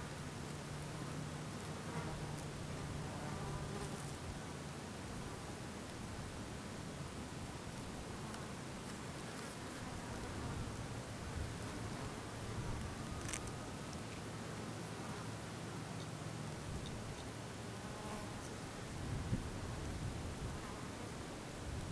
Knockfennell, Co. Limerick, Ireland - Knockfennel summit
We finally made it all the way up to the summit of Knockfennel. Here, mainly wind and buzzing insects can be heard. Some birds can be heard in a distance, below the hill. The visual view and the acouscenic soundscape is breathtaking.
Munster, Republic of Ireland, 18 July 2013